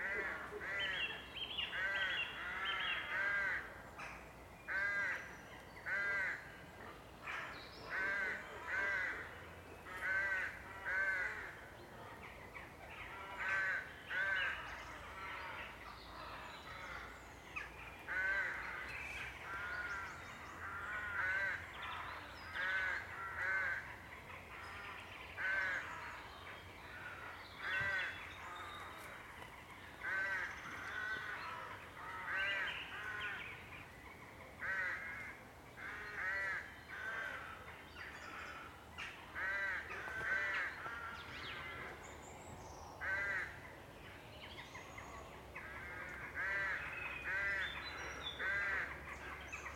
Friston Forest, Cuckmere Valley, East Sussex, UK - Evening crows
Crows and other birds squawking in Friston Forest.
(zoom H4n internal mics)
Seaford, East Sussex, UK, 2 April 2015